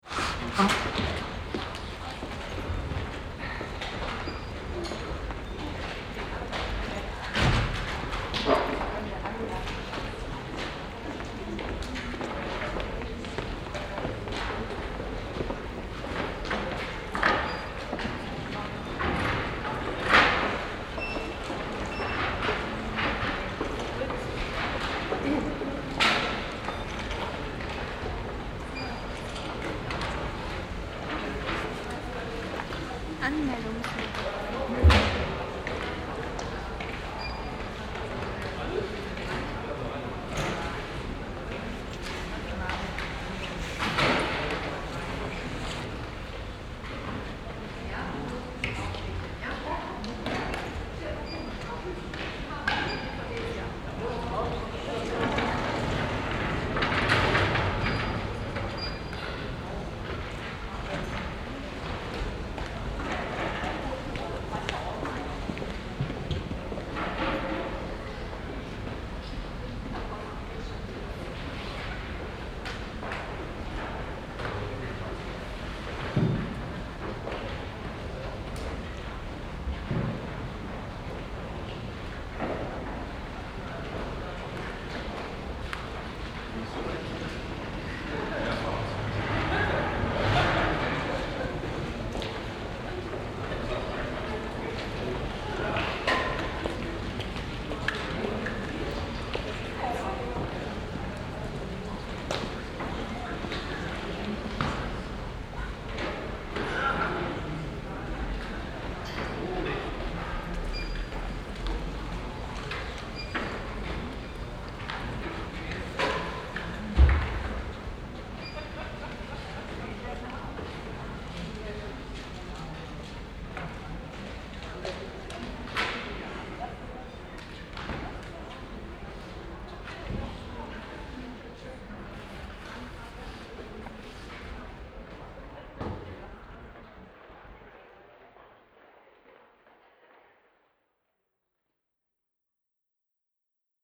In der Stadtbibliothek. Der Klang von Bücherwagen und elektronischen Registriergeräten, Stimmen und Schritten in der ansonsten gedämpften Grundatmosphäre.
Inside the city library.
Projekt - Stadtklang//: Hörorte - topographic field recordings and social ambiences